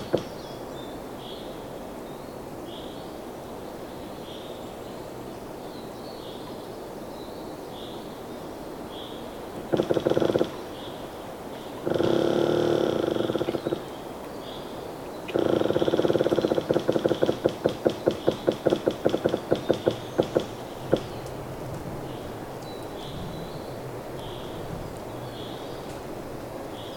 Utena, Lithuania, another squeaking tree
my obsession with trees continues. another squeaking pine tree. this time the sound is produced not by two rubbing trees but by a single pine tree with two tops. first part of recording: geophone. second part: small omni
Utenos apskritis, Lietuva, April 2021